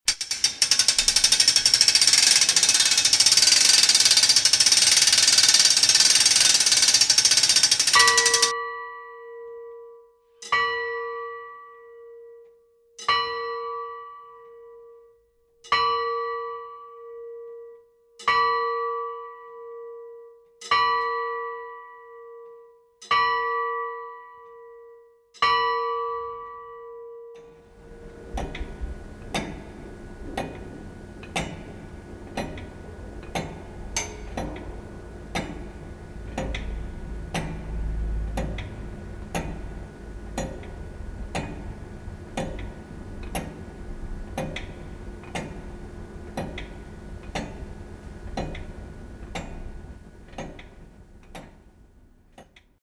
{
  "title": "mettmann, neanderstrasse, rathaus - mettmann, rathaus, alte rathausuhr",
  "description": "aufziehen, ticken und schlagen der alten rathaus uhr\nsoundmap nrw:\nsocial ambiences/ listen to the people - in & outdoor nearfield recordings",
  "latitude": "51.25",
  "longitude": "6.97",
  "altitude": "135",
  "timezone": "GMT+1"
}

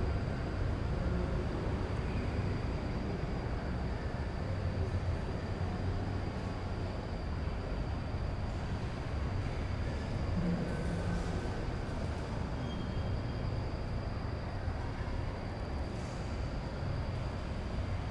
{
  "title": "Cl., Medellín, Belén, Medellín, Antioquia, Colombia - Cerrando Iglesia",
  "date": "2022-09-05 20:15:00",
  "description": "Se escucha los grillos, personas hablando, el sonido de bus y moto, el sonido de cosas siendo arrastradas.",
  "latitude": "6.23",
  "longitude": "-75.61",
  "altitude": "1551",
  "timezone": "America/Bogota"
}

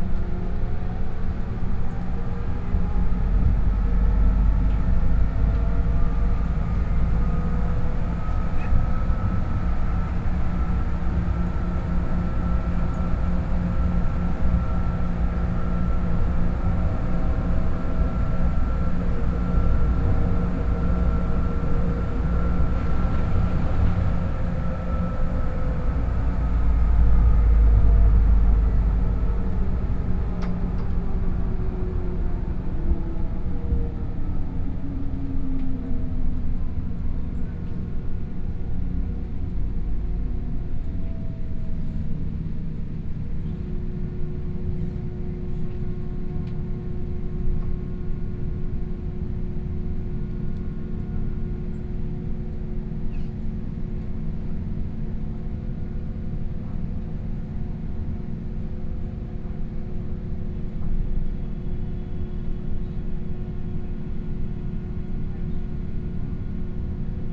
{
  "title": "Wenshan District, Taipei - Wenshan Line (Taipei Metro)",
  "date": "2013-09-30 18:26:00",
  "description": "from Wanfang Hospital station to Zhongxiao Fuxing station, Sony PCM D50 + Soundman OKM II",
  "latitude": "25.02",
  "longitude": "121.56",
  "altitude": "22",
  "timezone": "Asia/Taipei"
}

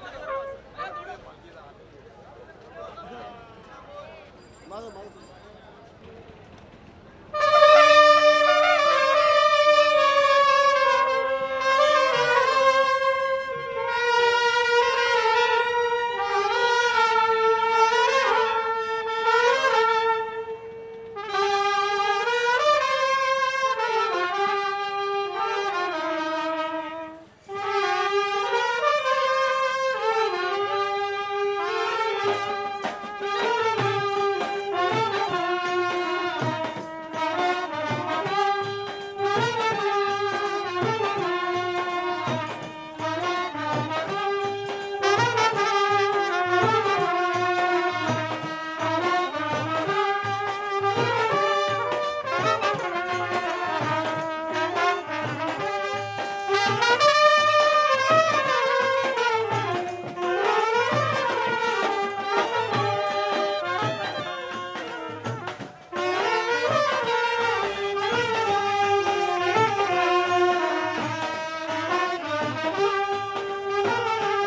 {
  "title": "Shahid Bhagat Singh Marg, near Bata, Cusrow Baug, Apollo Bandar, Colaba, Mumbai, Maharashtra, Inde - Collaba Market",
  "date": "2002-12-12 21:00:00",
  "description": "Collaba Market\nFanfare - ambiance",
  "latitude": "18.92",
  "longitude": "72.83",
  "altitude": "12",
  "timezone": "Asia/Kolkata"
}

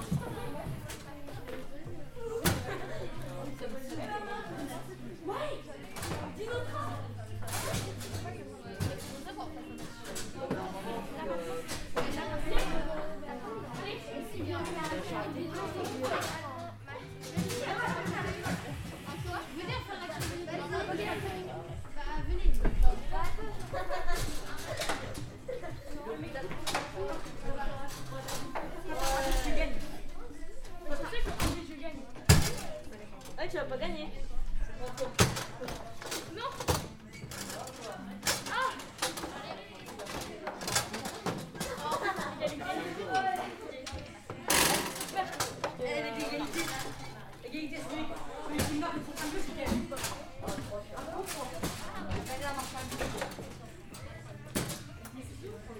{
  "title": "Rue du Stade, Piney, France - Le foyer au collège des Roises",
  "date": "2022-01-14 10:52:00",
  "description": "Les sons du babyfoot, de la radio et de collégiens de Piney un vendredi matin.",
  "latitude": "48.36",
  "longitude": "4.33",
  "altitude": "111",
  "timezone": "Europe/Paris"
}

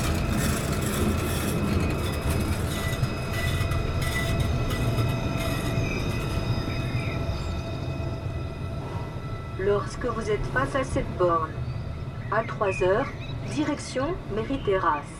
{
  "title": "Pl. du Peuple, Saint-Étienne, France - St-Etienne - Loire - Bornes audio",
  "date": "2013-08-13 07:00:00",
  "description": "St-Etienne - Loire\nPlace du Peuple\nSur la place Centrale, des bornes audio sont installées pour guider les touristes ou autres dans leur déplacements dans la ville.\nVandalisées elles ne resteront que quelques mois (semaines).",
  "latitude": "45.44",
  "longitude": "4.39",
  "altitude": "527",
  "timezone": "Europe/Paris"
}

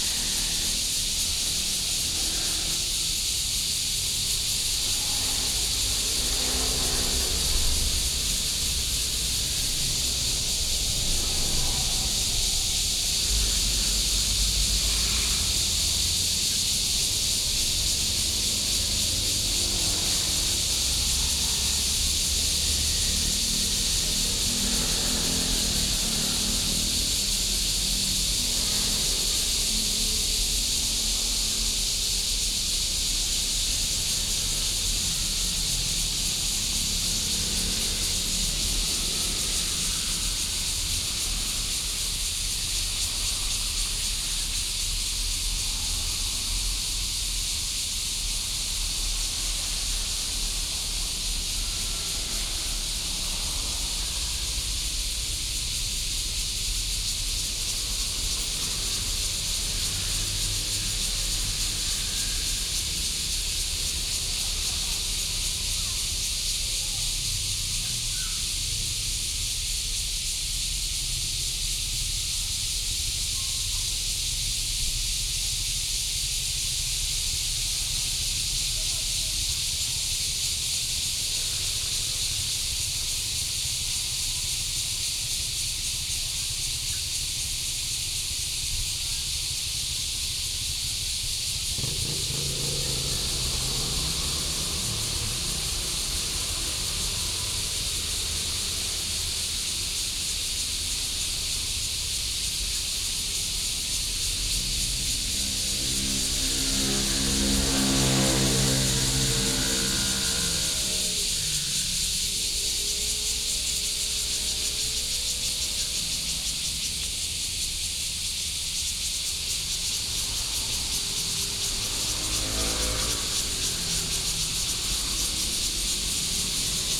{
  "title": "中壢永福宮, Zhongli Dist., Taoyuan City - In the square of the temple",
  "date": "2017-07-10 18:30:00",
  "description": "In the square of the temple, cicadas sound, frog, Traffic sound",
  "latitude": "24.94",
  "longitude": "121.25",
  "altitude": "165",
  "timezone": "Asia/Taipei"
}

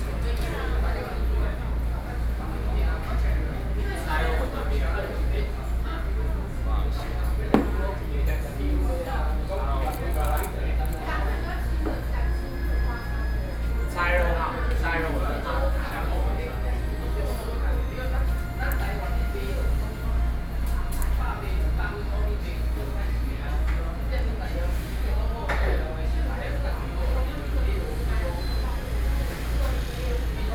{
  "title": "Da'an District, Taipei - In the restaurant",
  "date": "2013-05-10 14:34:00",
  "description": "In the restaurant, Air conditioning noise, Sony PCM D50 + Soundman OKM II",
  "latitude": "25.02",
  "longitude": "121.53",
  "altitude": "18",
  "timezone": "Asia/Taipei"
}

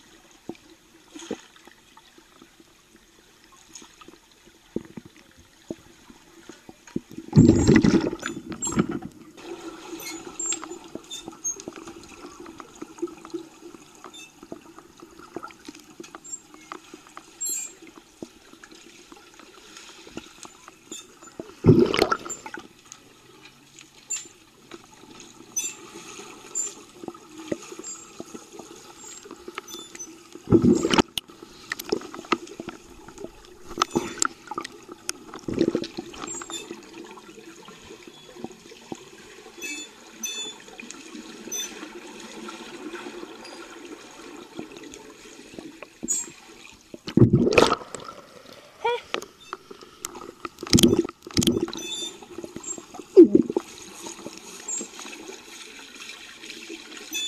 {
  "title": "MacArthur Blvd, Oakland, CA, USA - Underwater Exploration, Oakland",
  "date": "2018-10-05 17:30:00",
  "description": "In the pool, recording 5 people moving around the space.",
  "latitude": "37.78",
  "longitude": "-122.19",
  "altitude": "47",
  "timezone": "GMT+1"
}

